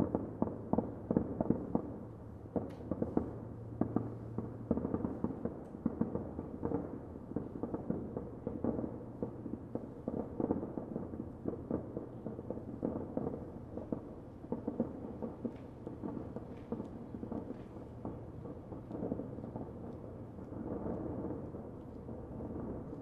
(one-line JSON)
{"title": "Cologne - Fireworks in the distance", "date": "2009-08-06 22:54:00", "description": "Hearing fireworks in the distance - sounds like distant canons of a civil war - spooky!", "latitude": "50.97", "longitude": "6.95", "altitude": "49", "timezone": "Europe/Berlin"}